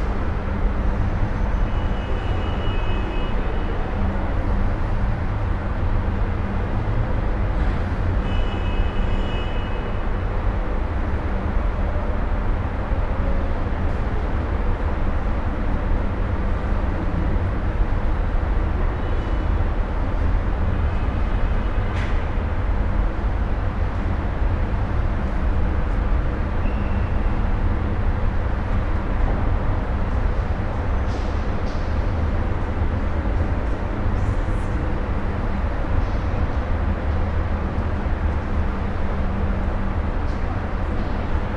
Former powerstation at Santral Istanbul
walking through the great halls of the former power station at Santral Istanbul.